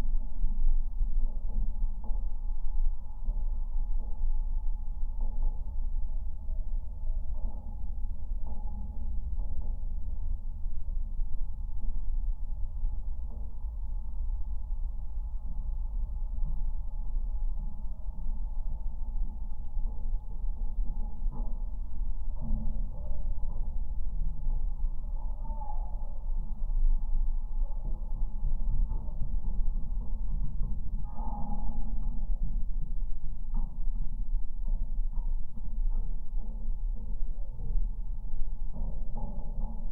Daugavpils, Latvia, pedestrians bridge
new LOM geophone on pedestrians bridge over railway lines